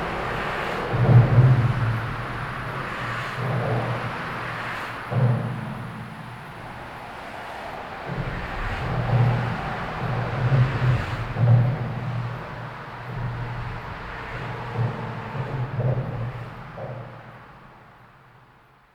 Under the motorway, Hamm, Germany - Freeway Drums...
… a bridge under the A1 motorway over the “Datteln-Hamm-Kanal”. I’ve often stopped over just for listening… and made dozens of recordings here; some of the recordings were woven together as the “bass-line” and eighth monologic “narrator” in the radio piece FREEWAY MAINSTREAM broadcast by Studio Acoustic Arts WDR3 in 2012.